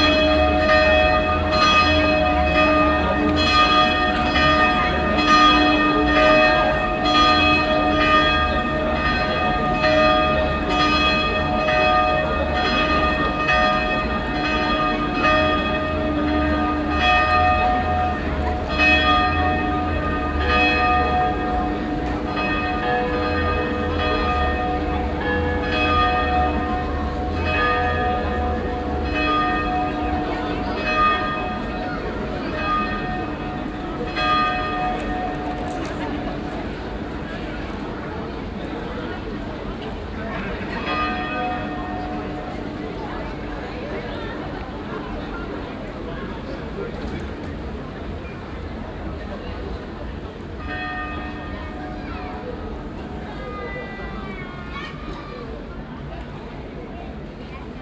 Stadtkern, Essen, Deutschland - essen, evangelian market church, bells
Vor der evangelischen Marktkirche. Der Klang der vier Uhr Glocken an einem Samstag Nachmittag. Am Ende plus die Stunden Glocke der in der Nähe stehenden Dom Kirche. Im Hintergrund Stimmen und Schritte auf dem Marktplatz.
In front of the evangelian market church. The sound of the 4o clock bells - at the end plus the hour bells of the nearby dom church.